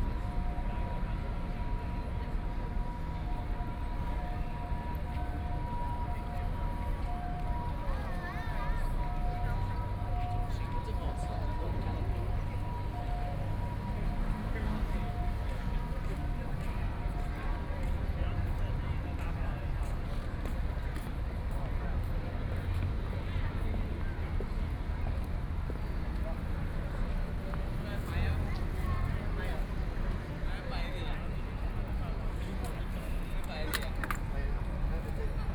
Tamsui District, New Taipei City - Sitting in front of the square
Sitting in front of the square, The distance protests, Many tourists, Footsteps, Traffic Sound
Please turn up the volume a little. Binaural recordings, Sony PCM D100+ Soundman OKM II
Danshui District, New Taipei City, Taiwan, 5 April 2014, ~18:00